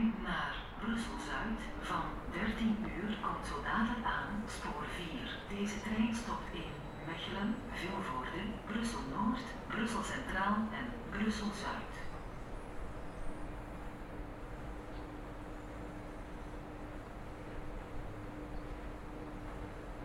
[Zoom H4n Pro] Platform at Mechelen-Nekkerspoel train station.